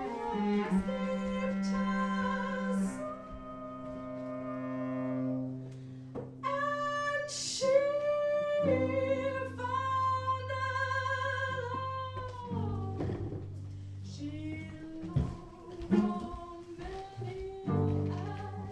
berlin, hobrechtstraße: mama bar - the city, the country & me: concert of ashia grzesik at mama bar
ashia grzesik - pay to be loved, live at mama
the city, the country & me: may 8, 2008